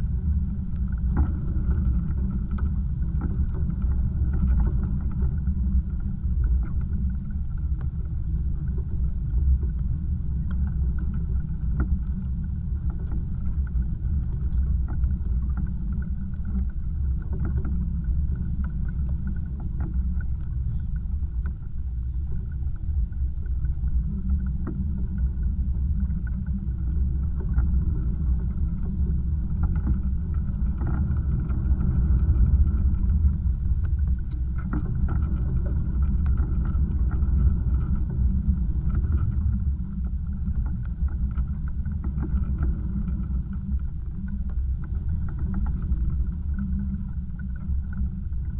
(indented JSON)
{
  "title": "EO Chanion Rethimnou, Crete, sea debris",
  "date": "2019-04-29 16:15:00",
  "description": "contact microphone on a pile of sea debris",
  "latitude": "35.35",
  "longitude": "24.36",
  "altitude": "3",
  "timezone": "Europe/Athens"
}